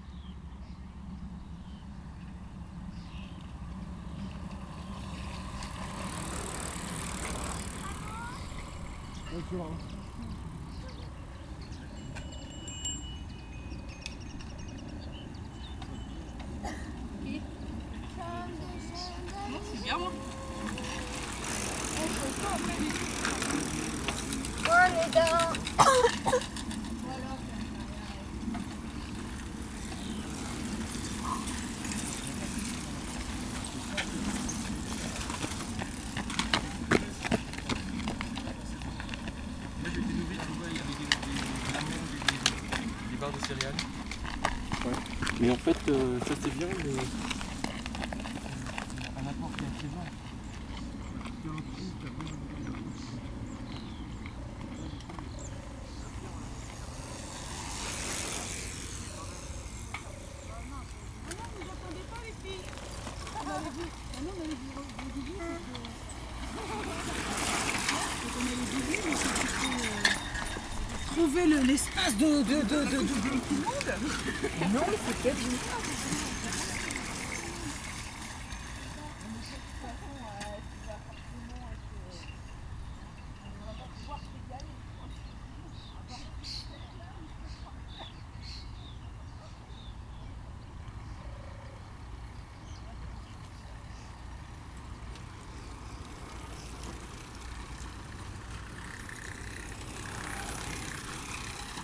Saint-Martin-de-Ré, France - Bicycles on Ré island
Lot and lot and lot of bicycles running on the touristic path between Saint-Martin-de-Ré and Loix villages.
20 May 2018